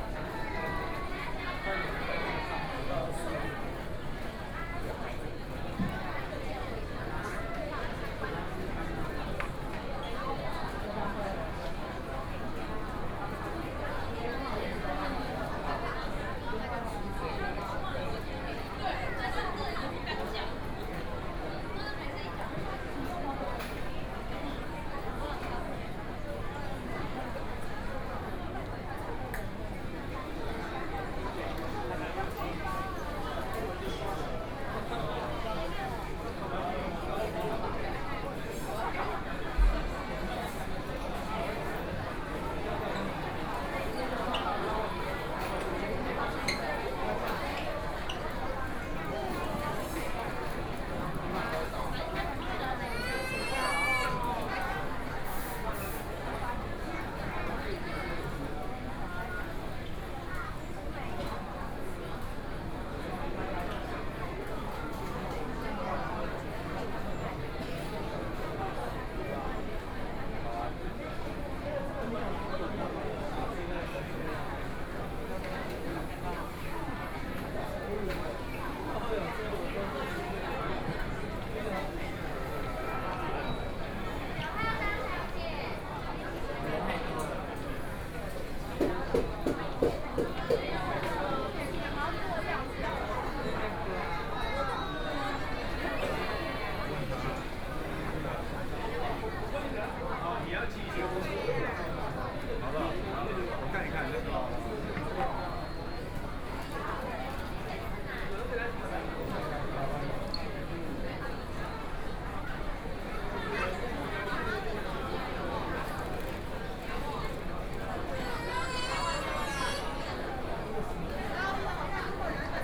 In the second floor of the station, In the restaurant inside and outside the restaurant entrance, Binaural recordings, Zoom H4n+ Soundman OKM II
Zhongzheng District, Taipei City, Taiwan, 31 December 2013